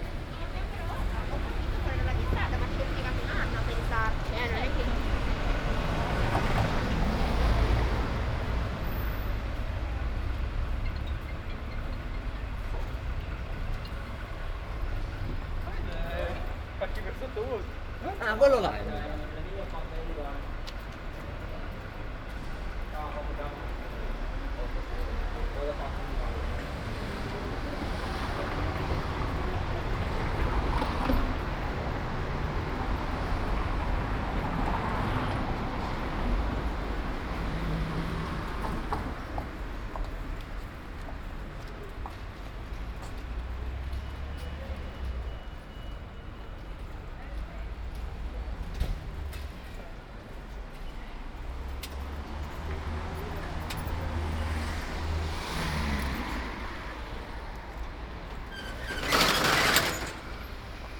Ascolto il tuo cuore, città. I listen to your heart, city. Several chapters **SCROLL DOWN FOR ALL RECORDINGS** - It’s seven o’clock with bells on Tuesday in the time of COVID19 Soundwalk
"It’s seven o’clock with bells on Tuesday in the time of COVID19" Soundwalk
Chapter LXXXVII of Ascolto il tuo cuore, città. I listen to your heart, city
Tuesday, May 26th 2020. San Salvario district Turin, walking to Corso Vittorio Emanuele II and back, seventy-seven days after (but day twenty-three of Phase II and day ten of Phase IIB and day four of Phase IIC) of emergency disposition due to the epidemic of COVID19.
Start at 6:51 p.m. end at 7:17 p.m. duration of recording 26’09”
The entire path is associated with a synchronized GPS track recorded in the (kmz, kml, gpx) files downloadable here: